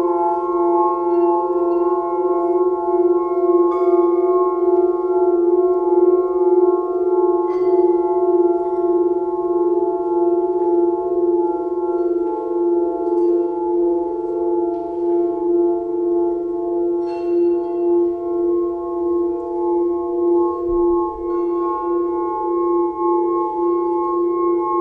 Fragment from the sound performance of Dan Senn at the Trafačka new music festival Echoflux. The lydes are played by Dan Senn, Anja Kaufman, Petra Dubach, Mario van Horrik and George Cremaschi.